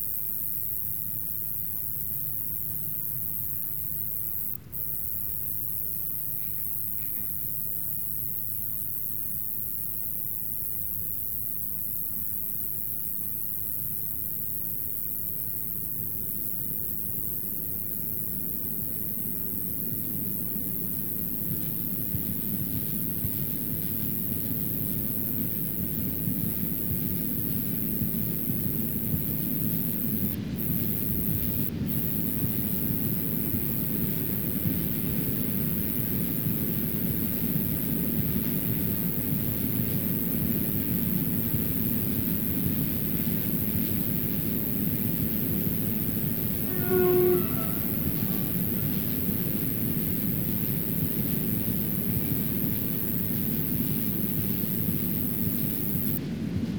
Poznan, Morasko, field road - cricket take one
zooming on a cricket, long sustain chirp